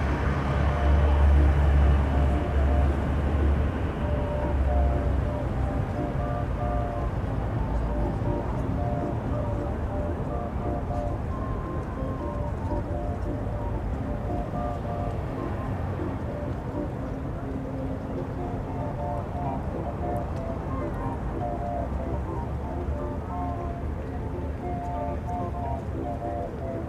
Piazza del Popolo, Rome, Carillion 1
Piazza del Popolo, Rome.
Carillion